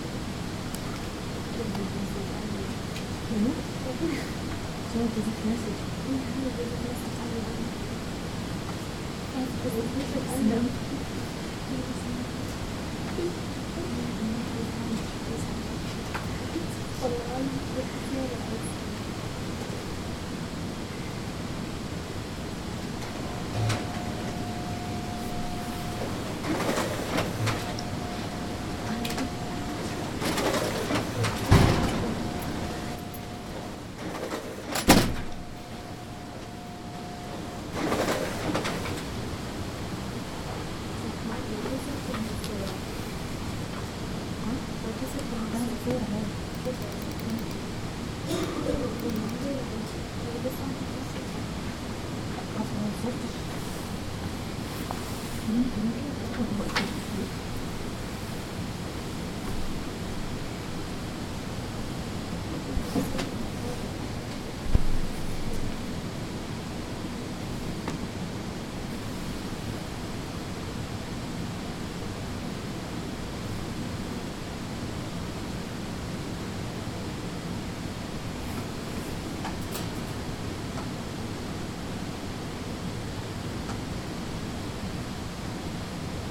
University of Colorado Boulder, Regent Drive, Boulder, CO, USA - Mac Computer Lab
Typing in the Mac lab next to a printer